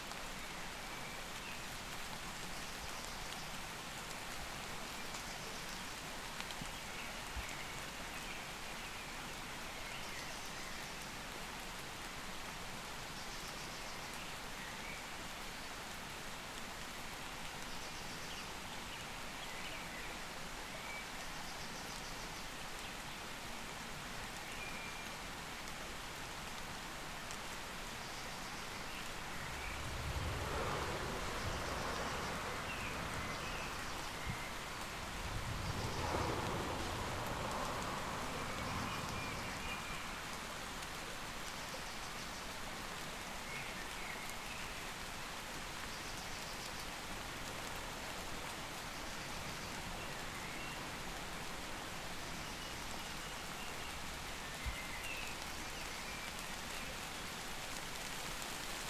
Morning atmosphere, medium rain, nearby small creek, distant traffic (handheld recorder, xy-stereo)